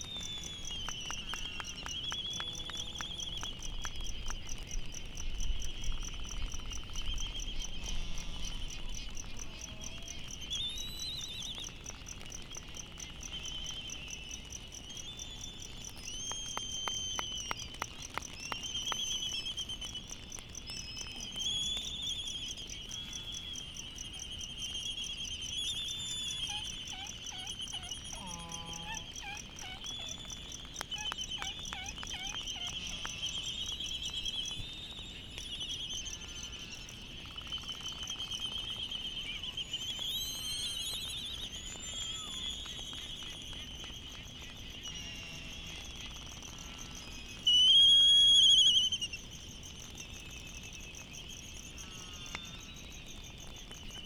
Midway Atoll soundscape ... Sand Island ... bird call from Laysan albatross ... white tern ... black noddy ... distant black-footed albatross and a cricket ... open lavaliers on mini tripod ... background noise and some wind blast ... one or two bonin petrels still leaving ...
15 March 2012, 07:30